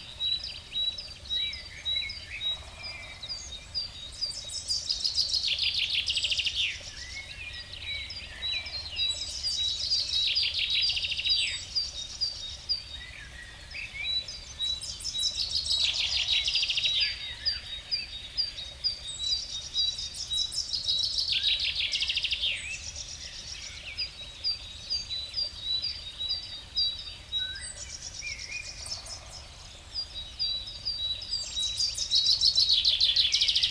Stoumont, Belgium - Birds waking up
During my breakfast. A small stream, and birds waking up. A very excited Common Chaffinch singing and fighting !